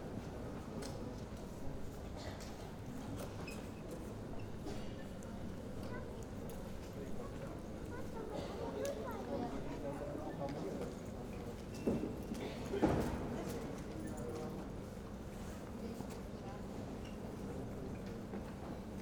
{"title": "airport Luxembourg (LUX) - early morning hall ambience", "date": "2014-03-05 05:00:00", "description": "murmur of voices, steps and a security announcement, airport Luxembourg, early morning hall ambience\n(Sony PCM D50)", "latitude": "49.63", "longitude": "6.22", "timezone": "Europe/Luxembourg"}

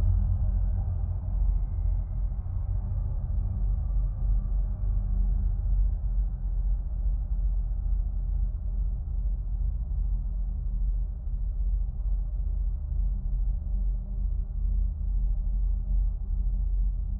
{"title": "Morningside Heights - Fire Escape", "date": "2021-01-12 02:30:00", "description": "Contact microphone on an 8th-floor steel fire escape.\nManhattan, NYC.", "latitude": "40.81", "longitude": "-73.96", "altitude": "53", "timezone": "America/New_York"}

